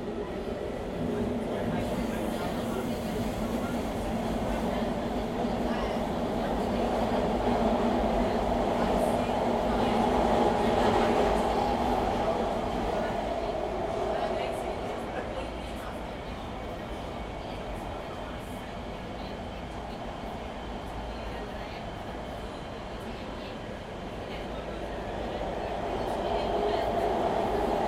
East Hollywood, Los Angeles, Kalifornien, USA - LA - underground train ride
LA - underground train ride, red line to union station, passengers talking, announcements, doors opening and closing;
CA, USA